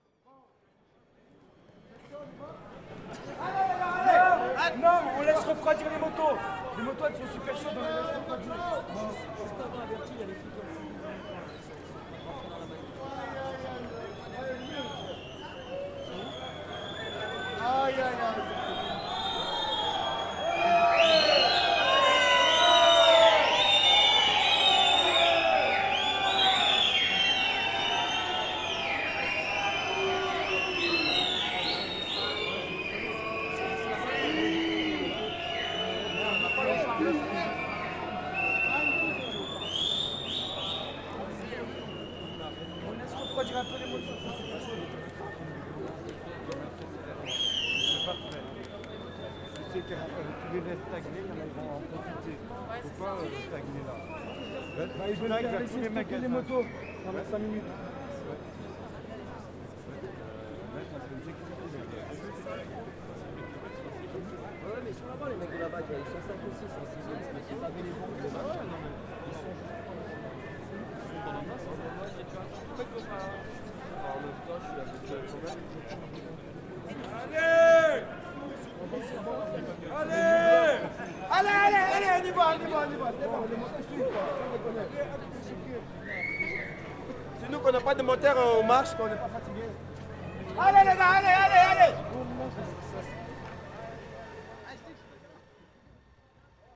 Rue Gambetta, Saint-Étienne, France - St-Etienne (42000)
St-Etienne (42000)
Manifestation des "Gilets Jaunes"
quelques slogans